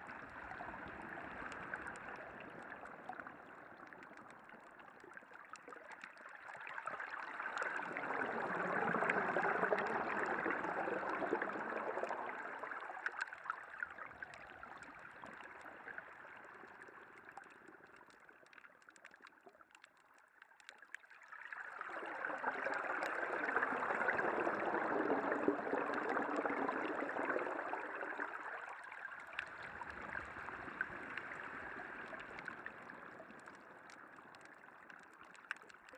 {"title": "Kalaone Sea Walk, Alofi, Niue - Kalaone Hydrophone", "date": "2012-06-14 22:00:00", "latitude": "-19.06", "longitude": "-169.92", "altitude": "-3", "timezone": "Pacific/Niue"}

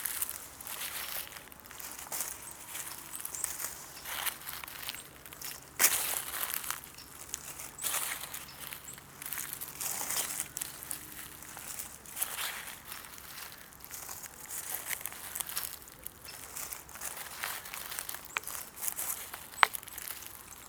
{"title": "Wet zones, Pavia, Italy - Grounding on the dead leaves", "date": "2012-11-01 16:20:00", "description": "few steps barefoot on oak dead leaves, acorns and brushes", "latitude": "45.17", "longitude": "9.19", "altitude": "57", "timezone": "Europe/Rome"}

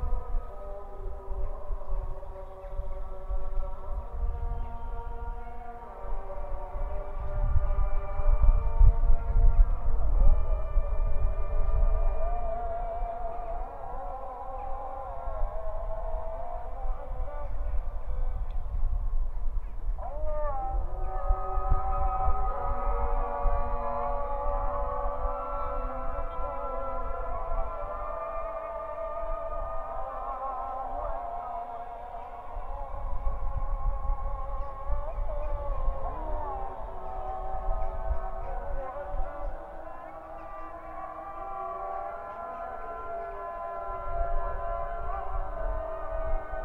{"title": "Israel, Jerusalem, eastern Pisgat Ze'ev neighbourhood - muezzins calls for prayer and shooting sounds in north eastern Jerusalem", "date": "2016-11-18 16:43:00", "description": "by Lenna Shterenberg. Those sounds is from observation view in the eastern Pisgat Ze'ev Jewish neighbourhood to Shuafat refugee camp. While a muezzins calls for a prayer, you can hear also a sound of shootings.", "latitude": "31.82", "longitude": "35.25", "altitude": "725", "timezone": "Asia/Hebron"}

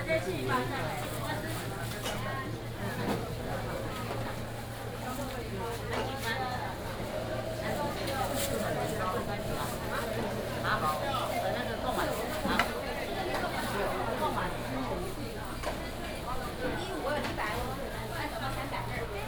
{"title": "Qingshui St., Tamsui Dist., New Taipei City - Walking through the traditional market", "date": "2017-04-16 09:17:00", "description": "Walking through the traditional market, Very narrow alley, traffic sound", "latitude": "25.17", "longitude": "121.44", "altitude": "19", "timezone": "Asia/Taipei"}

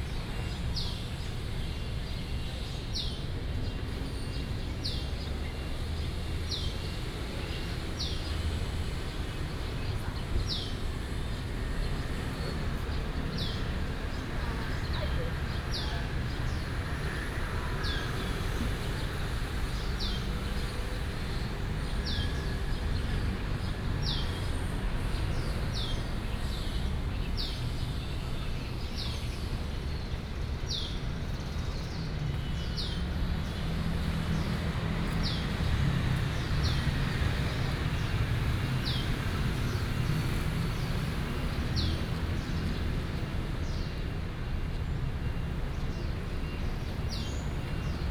{"title": "永康公園, Da’an Dist., Taipei City - Bird calls", "date": "2015-07-21 09:42:00", "description": "Bird calls, traffic sound, in the Park", "latitude": "25.03", "longitude": "121.53", "altitude": "14", "timezone": "Asia/Taipei"}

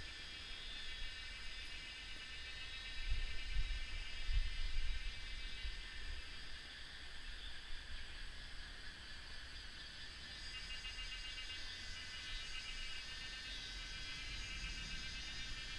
{"title": "中路坑溼地, 桃米生態村 - Cicadas cry", "date": "2015-06-10 16:45:00", "description": "Cicadas cry, Bird calls, Very hot weather", "latitude": "23.94", "longitude": "120.92", "altitude": "492", "timezone": "Asia/Taipei"}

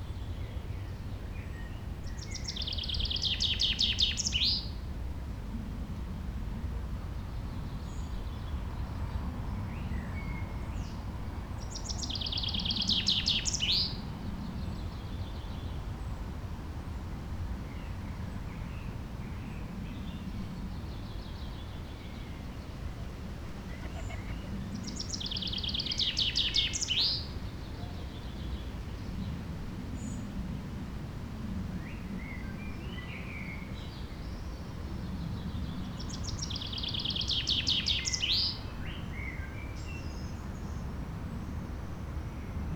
{"title": "wermelskirchen, berliner straße: stadtfriedhof - the city, the country & me: cemetery", "date": "2011-05-07 11:29:00", "description": "singing birds, old man with trolley\nthe city, the country & me: may 7, 2011", "latitude": "51.14", "longitude": "7.22", "altitude": "305", "timezone": "Europe/Berlin"}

